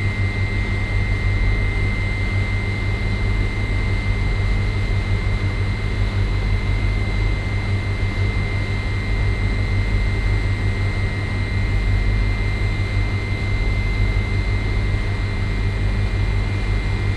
wolfsburg, willy brandt platz, tiefgarage, lüftung
aussenlüftung einer tiefgarage
soundmap:
social ambiences, topographic field recordings